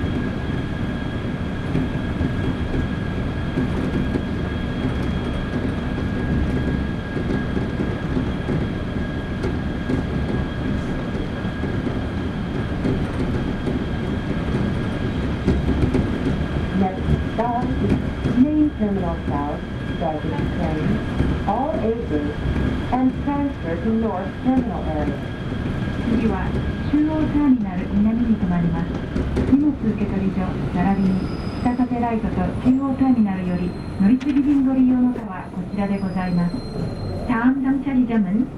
SeaTac Airport - SeaTac #2
Aboard the South Satellite shuttle subway train. I like the bilingual announcements but the ride is less than two minutes. I continued taping out to the concourse.